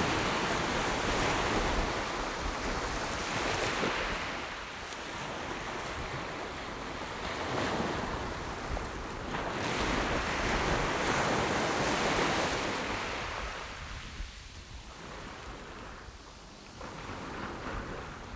烏崁里, Magong City - Small beach
Small beach, Sound of the waves
Zoom H6 + Rode NT4